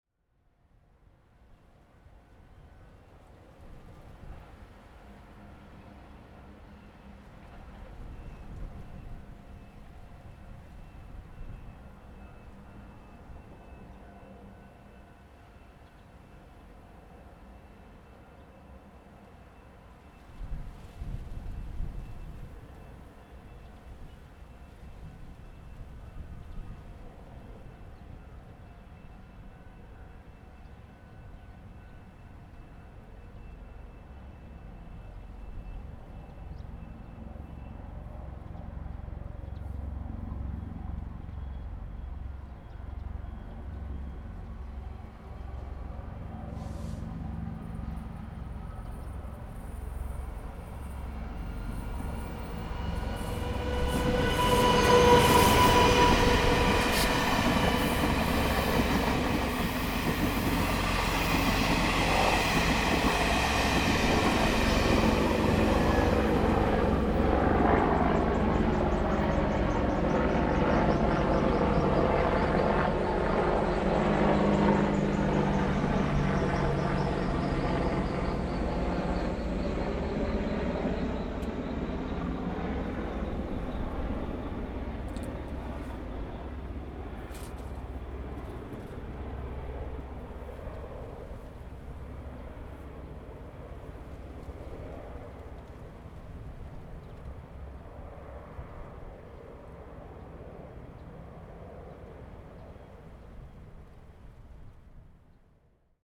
Tongxiao Township, Miaoli County - in the park
Traffic sound, The train runs through
Zoom H2n MS+XY +Spatial audio
Miaoli County, Taiwan, 24 March 2017, 11:53